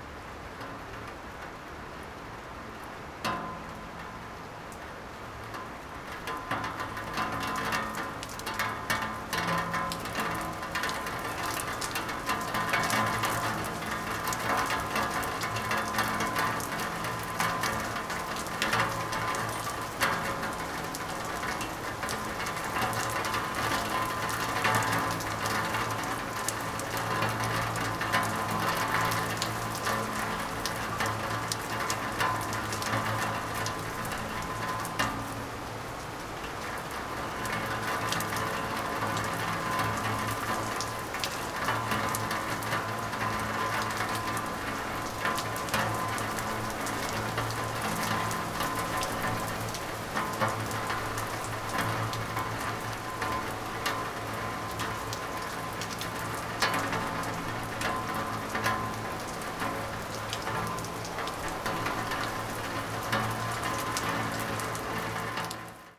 Poznan, balcony - baking sheet
rain drops drumming on a baking sheet (sony d50)
Poznan, Poland